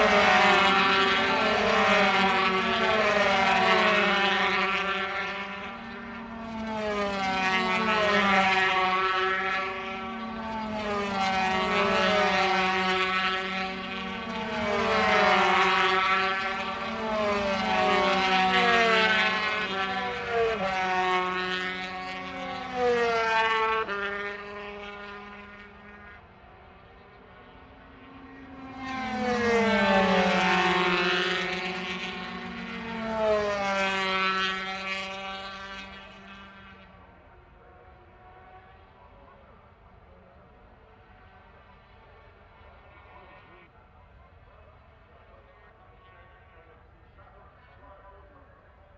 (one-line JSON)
{"title": "Unnamed Road, Derby, UK - british motorcycle grand prix 2006 ... 125 race ...", "date": "2006-07-02 11:00:00", "description": "british motorcycle grand prix 2006 ... 125 race ... one point stereo mic to mini disk ...", "latitude": "52.83", "longitude": "-1.37", "altitude": "81", "timezone": "Europe/London"}